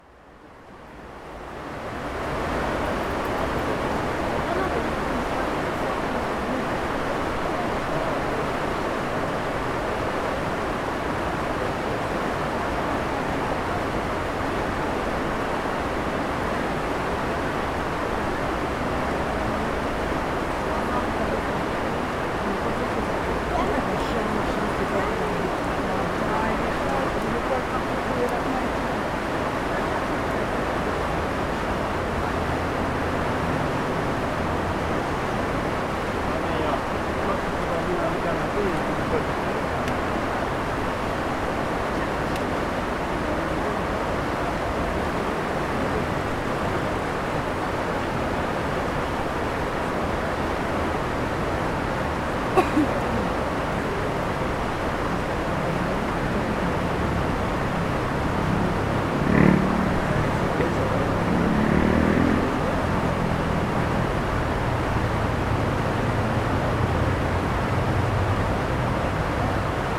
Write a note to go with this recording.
Depuis la passerelle St Laurent réservée aux piétons et cyclistes, les remoux de l'Isère et les passants.